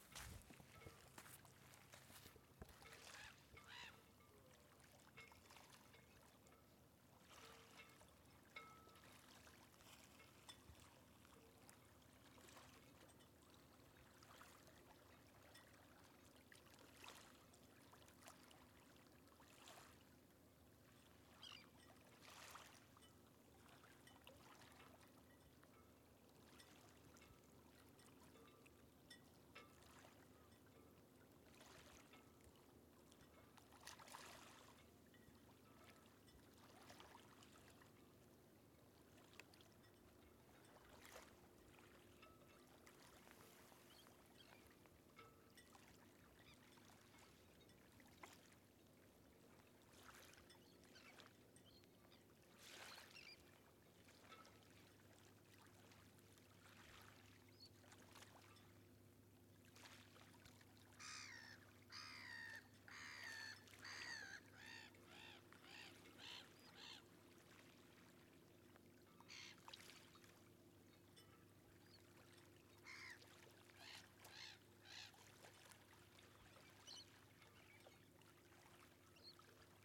Unnamed Road, Larmor-Baden, France - amb calme avec mats de bateau et vaguelettes
ambiance calme prise depuis les rochers de l'île berder - quelques mouettes, un bateau à moteur au loin et des claquements métalliques de mats de bateaux qui se mêlent aux vaguelettes.